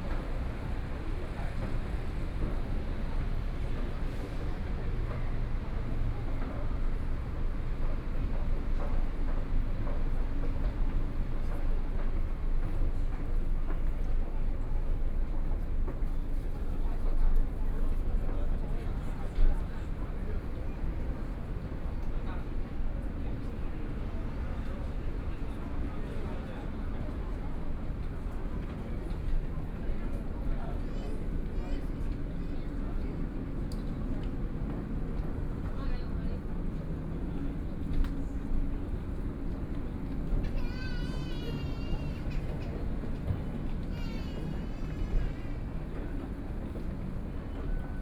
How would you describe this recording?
Zhongxiao Fuxing Station, In the process of moving escalator, Binaural recordings, Sony PCM D100 + Soundman OKM II